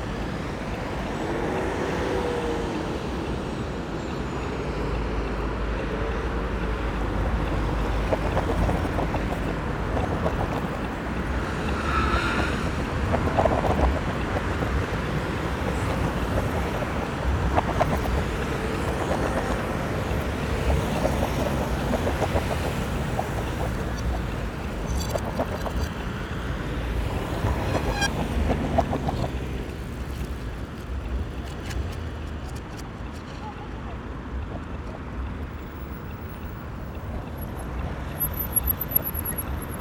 Peak traffic rhythms, BauAs Otto-Braun-Str., Berlin, Germany - Rush hour traffic rhythms, ticking lights, tyres on rails
One of the busiest corners in Berlin. Trams rumble and clatter heavily across steel rails, tyres flap rhythmically over the tram tracks, cyclists pass in droves, pedestrians wait patiently. All movements are controlled by the lights that tick, red, yellow, green, directions, speeds and timings. It looks fluid, but is very disciplined. Almost everyone does exactly as expected. Impressive social/cultural agreement found less in other cities.